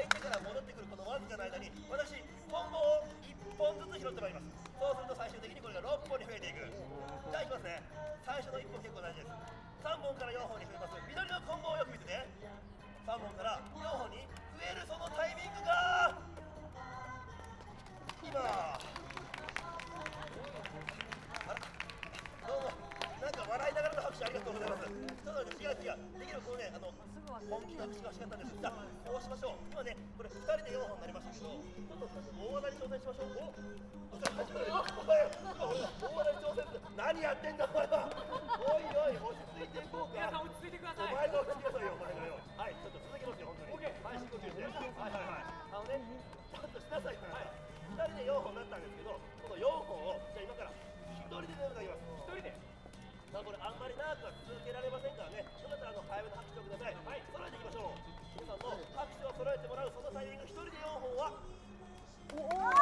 {"title": "Osaka, National Art Museum forecourt - conjurers", "date": "2013-03-31 15:54:00", "description": "two conjurers performing and entertaining kids in front of National Art Museum and Museum of Technology.", "latitude": "34.69", "longitude": "135.49", "altitude": "3", "timezone": "Asia/Tokyo"}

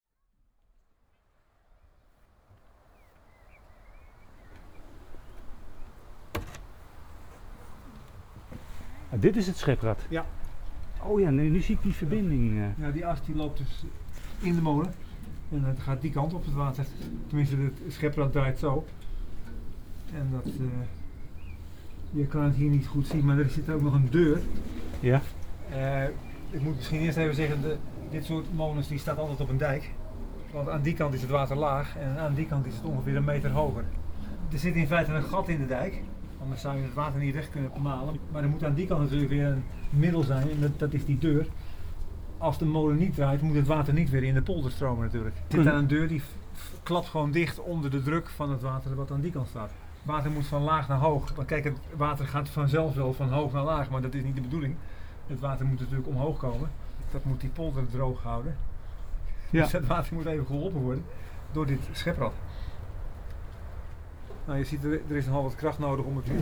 {"title": "over het scheprad", "date": "2011-07-09 15:45:00", "description": "molenaar Kees vertelt over het houten scheprad", "latitude": "52.15", "longitude": "4.44", "altitude": "1", "timezone": "Europe/Amsterdam"}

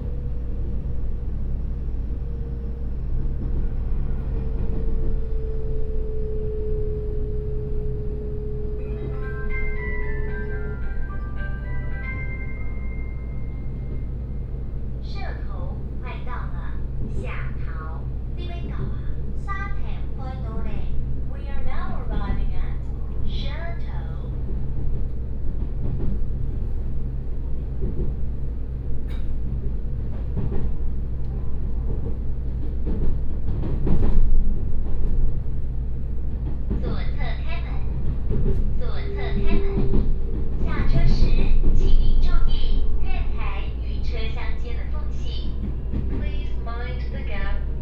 {"title": "Shetou Township, Changhua County - Train compartment", "date": "2016-05-12 14:16:00", "description": "In a railway carriage, from Yongjing Station to Shetou Station", "latitude": "23.91", "longitude": "120.58", "altitude": "35", "timezone": "Asia/Taipei"}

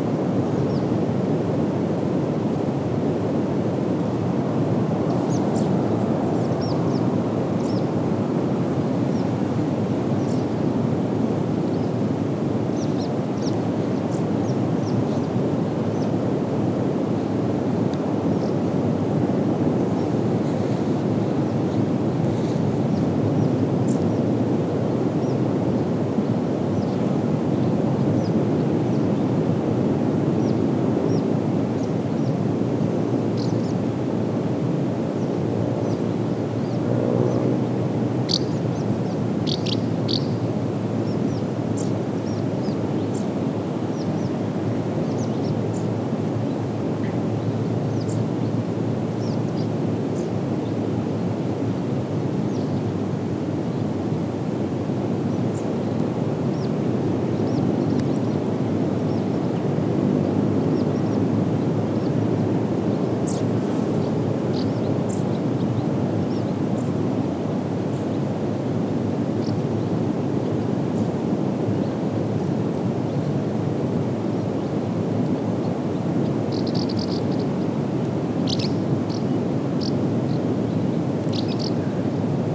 {"title": "Neringos sav., Lithuania - Forest Bordering the Dune", "date": "2016-08-04 18:17:00", "description": "Recordist: Aleksandar Baldazarski\nDescription: Between the sand dune and the forest. Wind sounds and birds chirping. Recorded with ZOOM H2N Handy Recorder.", "latitude": "55.29", "longitude": "20.99", "altitude": "17", "timezone": "Europe/Vilnius"}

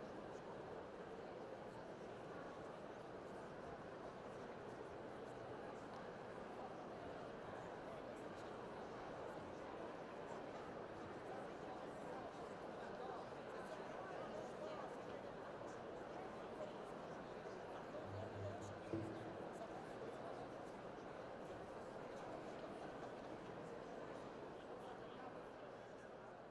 {"title": "Rijeka, Zamet, SportVenue, waiting concert", "date": "2011-03-08 20:15:00", "latitude": "45.34", "longitude": "14.38", "altitude": "108", "timezone": "CET"}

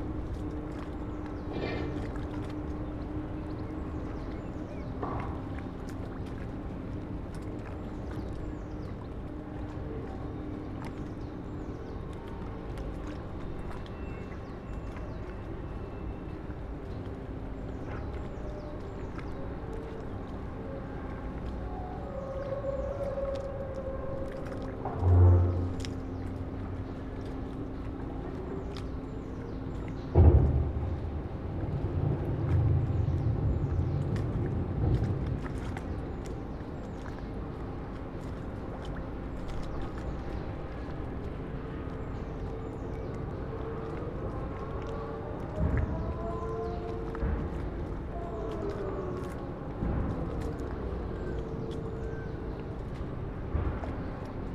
{"title": "Berlin, Plänterwald, Spree - Saturday afternoon ambience", "date": "2017-05-06 16:40:00", "description": "place revisited. loading and shunting going on at the concrete factory and the power plant. mics placed near the surface of the water\n(SD702, S502ORTF)", "latitude": "52.49", "longitude": "13.49", "altitude": "23", "timezone": "Europe/Berlin"}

